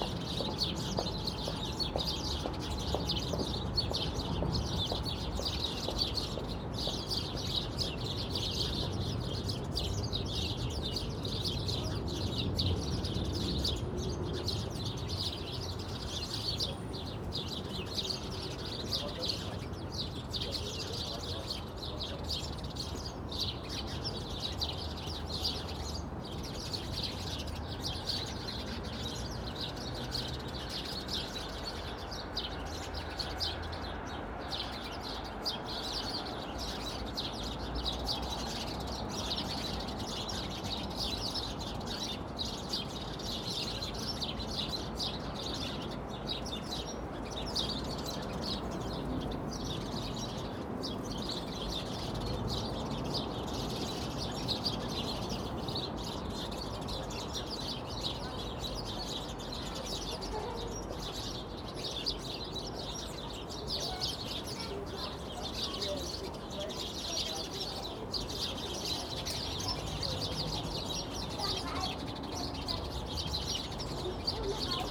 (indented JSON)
{
  "title": "Moabit, Berlin, Germany - Sparrow chatter",
  "date": "2012-03-28 17:41:00",
  "description": "One of Berlin's ubiquitous sounds on a cold grey evening.",
  "latitude": "52.53",
  "longitude": "13.34",
  "altitude": "40",
  "timezone": "Europe/Berlin"
}